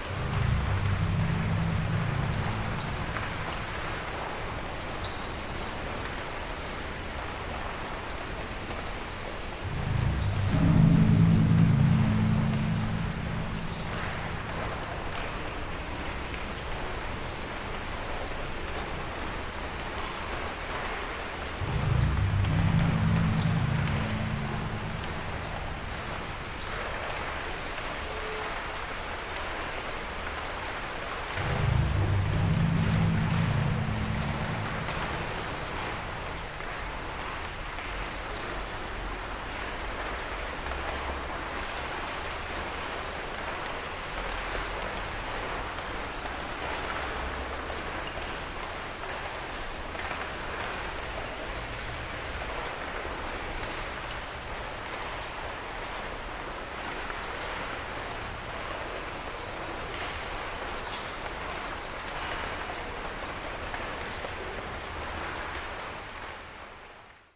water tower tank drone, Torun Poland
playing the structural bars on the old water tank while it is raining outside